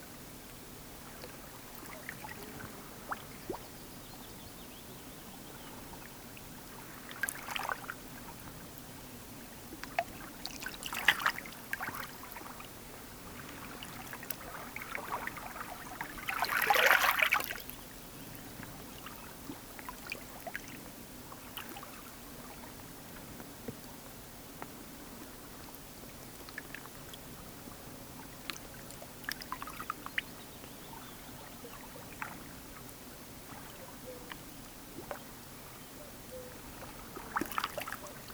easy lapping of waves on the rocks-birds, White Sea, Russia - easy lapping of waves on the rocks-birds
Easy lapping of waves on the rocks-birds.
Легкий плеск волн о камни, на море полный штиль, в лесу поют птицы.
12 June